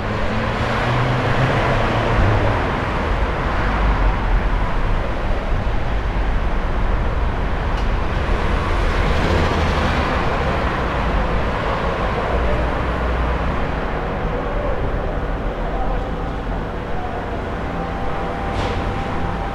my window, pomorska 18, Lodz
pomorska 18, Lodz